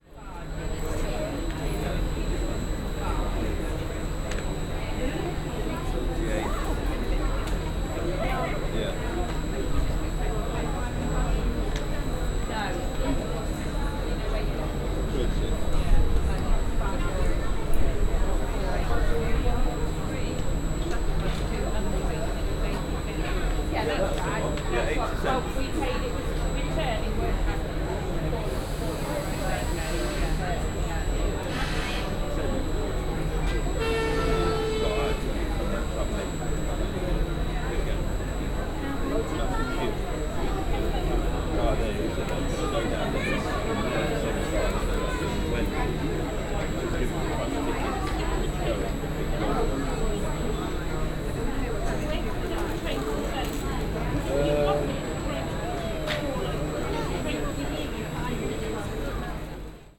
Civitavecchia, platform - white arrow
a group of tourist discussing the possibilities and cost of upgrading their tickets from a regular train to an express train. the train is idling on a platform nearby, projecting a loud, high-pitched buzz. you could see that the noise was disturbing for the people, most of them being distracted, frowning and having difficulties to communicate due to it.
Rome, Italy, September 2014